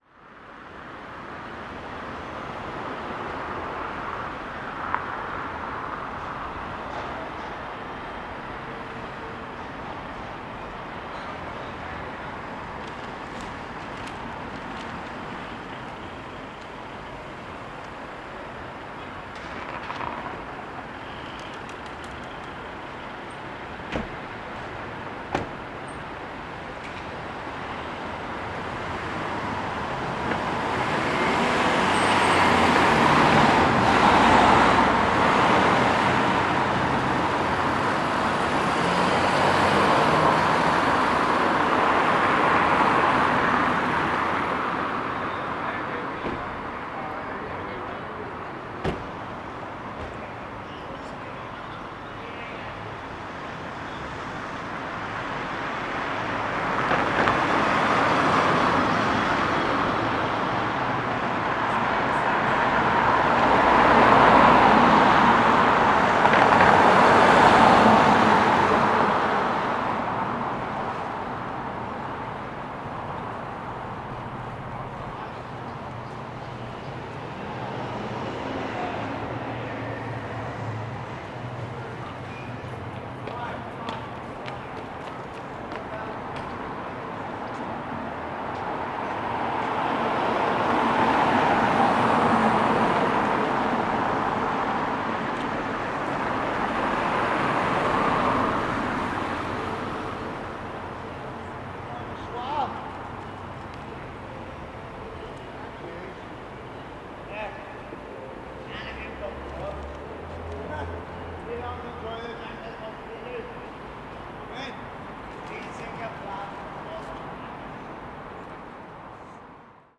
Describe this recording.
Recording of a bus engine stalled, birds flying and flapping, vehicles passing, car door opening, rollers on the sidewalk, distant group chatter, pedestrians walking, running child.